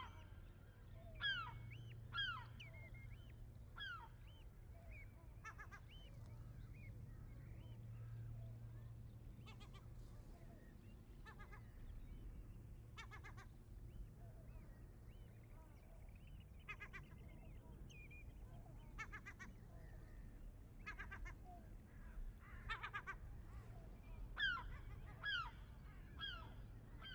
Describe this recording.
walking over shingle beach on the "island" - the old harbour wall of Port Carlisle. Many seabirds in background. ST350 mic. Binaural decode.